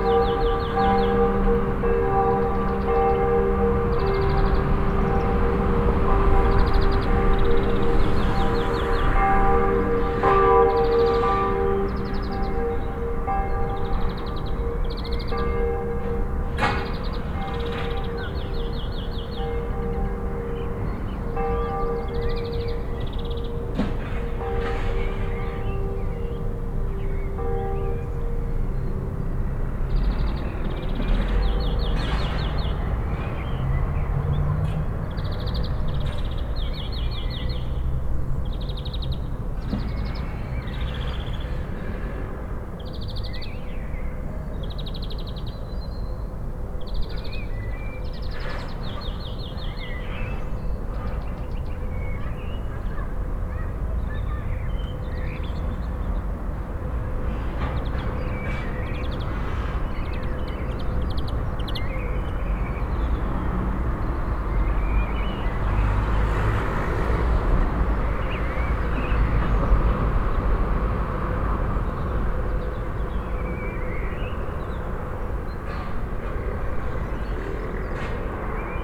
Lange Str., Hamm, Germany - two bells competing
twice a day a mix of (at least) two church bells can be heard in an ever changing never identical mix